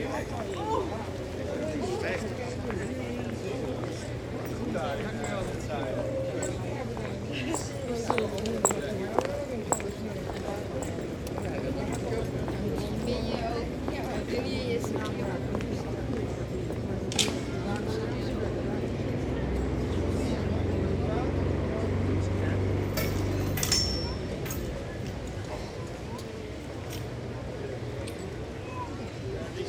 Vismarkt, Utrecht, Nederland - Vismarkt, Utrecht
- General atmosphere, pedestrians on the Vismarkt, Utrecht. Recorded Saturday September 13th 2014.
- Algemene sfeer, voetgangers op de Vismarkt, Utrecht. Opgenomen zaterdag 13 september 2014.
Zoom H2 internal mics.
13 September, 16:30